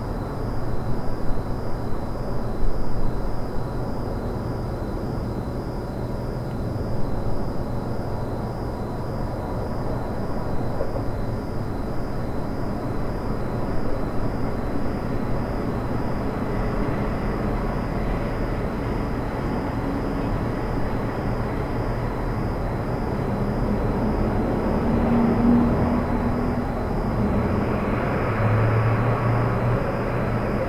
Crescent Heights, Calgary, AB, Canada - Garbage Room Bleeping
A weird refuge for the cold and tired. It was very warm in this outdoor nook, surrounded on almost all sides. This building is currently under construction, and it smells new and looks new, and no one is around. I could have fallen asleep; I should have fallen asleep. Why is the garbage room bleeping anyway?
Zoom H4n Recorder jammed under a locked door